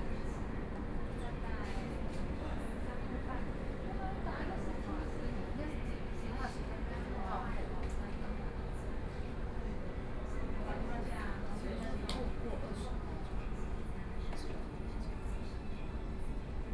Minquan West Road Station - at the platform
Waiting for the train arrived at the platform, Binaural recordings, Sony PCM D50 + Soundman OKM II